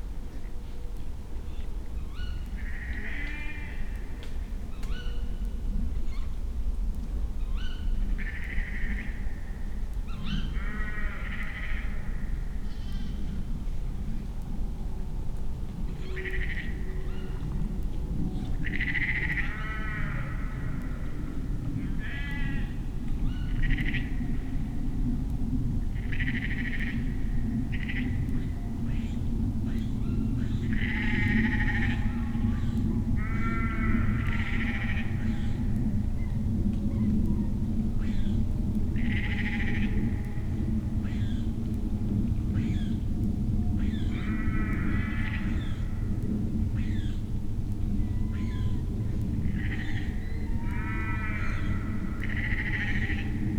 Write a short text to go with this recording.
an owl (strix aluco, female) joins the sheep. unfortunately a plane is crossing. (Sony PCM D50, Primo EM172)